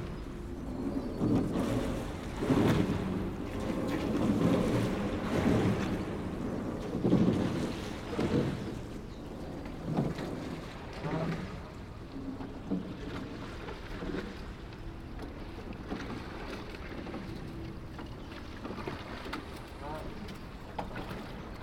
Taplow. Bridge over the Thames.
Rowers on the River Thames passing under the railway bridge (The brick bridge was designed by Isambard Kingdom Brunel and is commonly referred to as 'The Sounding Arch' due to its' distinctive echo).
Taplow, Buckinghamshire, UK, 5 March